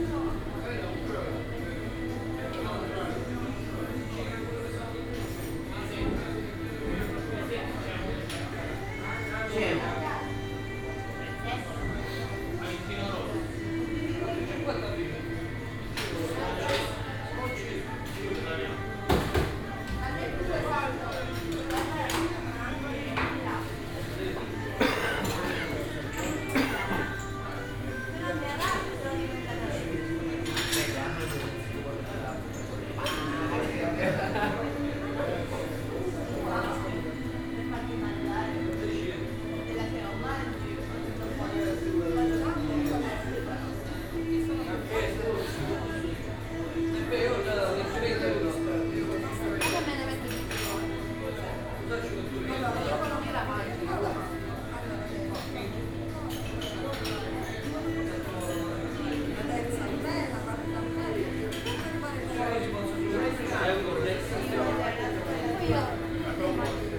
milazzo, harbour - cafe bar, early morning
cafe bar in the harbour area, night shifters of all kind are here after a stormy night